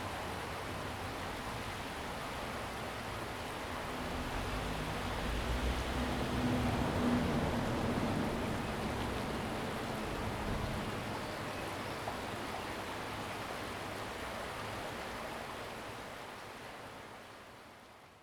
埔里鎮桃米里, Nantou County - The sound of water streams
The sound of water streams, Bird calls, Below the bridge
Zoom H2n MS+XY
Puli Township, Nantou County, Taiwan, 30 April 2015